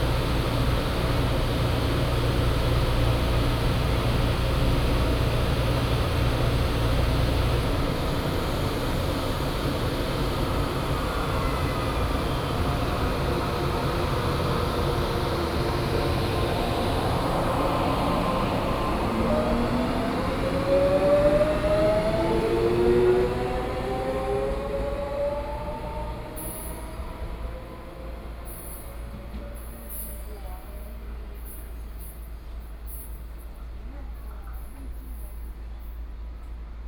Puxin, Taoyuan - Station platforms
in the Station platforms, Sony PCM D50+ Soundman OKM II